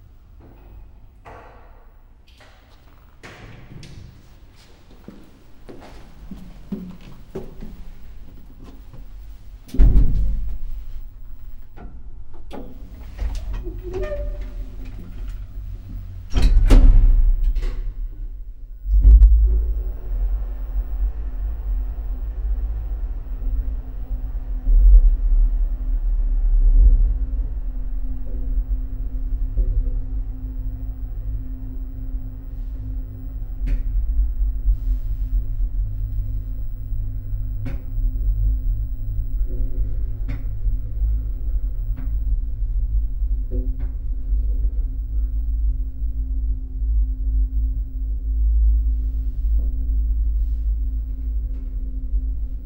pension Spree, Wilmersdorf, Berlin - lift, wooden staircase, walking
Sonopoetic paths Berlin